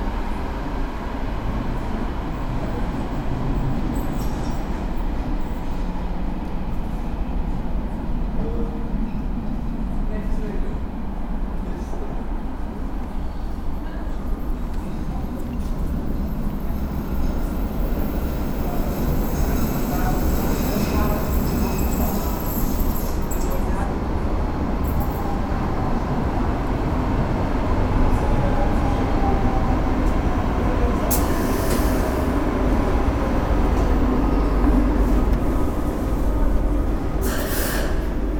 dortmund, kamsprasse, u-bahnhof haltestelle - dortmund, kampstrasse, u-bahnhof haltestelle
morgens an u-bahn-haltestelle, wartende menschen, ein- und ausfahrt von zwei zügen
soundmap nrw
social ambiences/ listen to the people - in & outdoor nearfield recordings